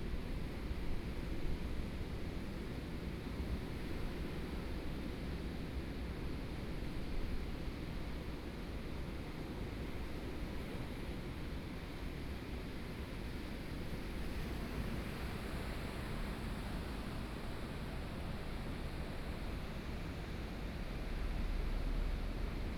Wai'ao, Toucheng Township - Sound of the waves
Sound of the waves, Binaural recordings, Zoom H4n+ Soundman OKM II